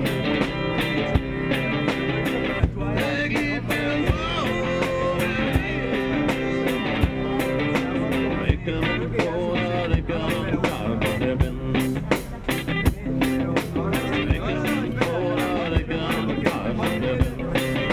country & reggae band during fête de la musique (day of music)
the city, the country & me: june 21, 2012
21 June 2012, ~22:00